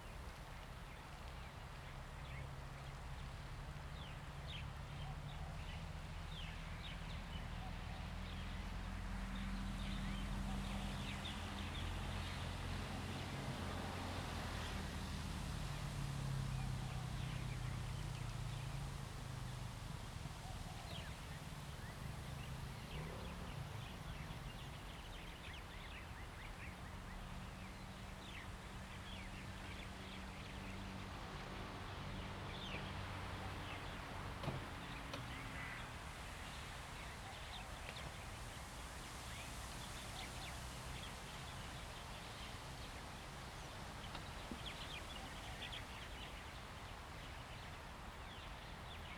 Jinning Township, Kinmen County - In the square
Birds singing, Forest and Wind
Zoom H2n MS+XY